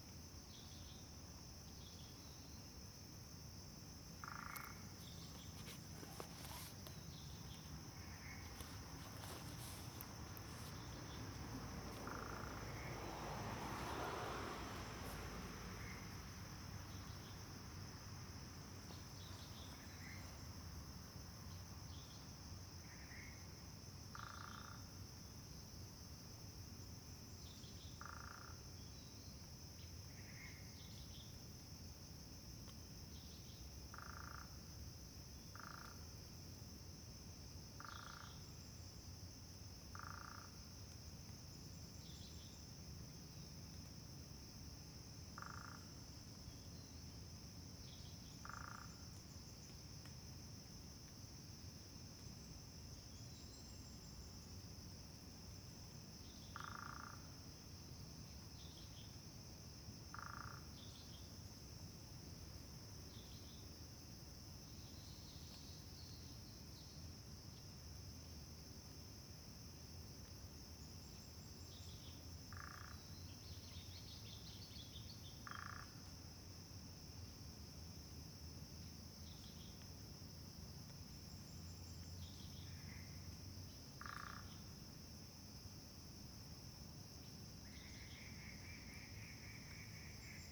水上巷, Puli Township, Nantou County - In the bamboo forest
In the bamboo forest, Bird sounds, Traffic Sound
Zoom H2n MS+XY